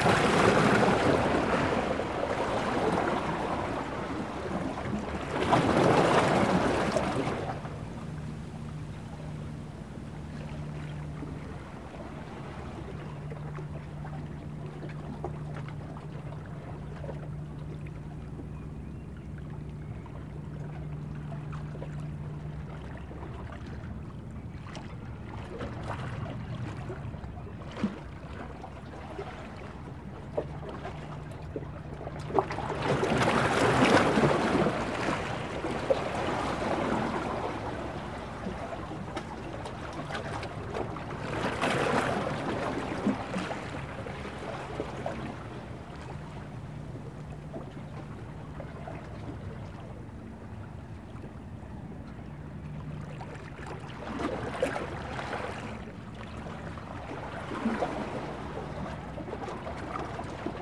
Sounds of the sea from the rock jetty. Here the mics were on the rocks, so the sound from above and below are almost equal in volume and harder to tell where one ends and another begins.
(zoom H4n internal mics)
Villefranche-sur-Mer, France, March 2015